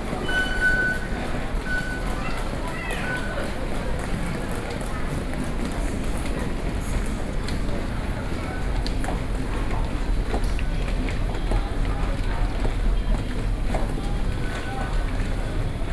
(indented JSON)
{
  "title": "Zhongxiao Fuxing Station, Taipei city - walking through MRT stations",
  "date": "2012-10-25 15:10:00",
  "latitude": "25.04",
  "longitude": "121.54",
  "timezone": "Asia/Taipei"
}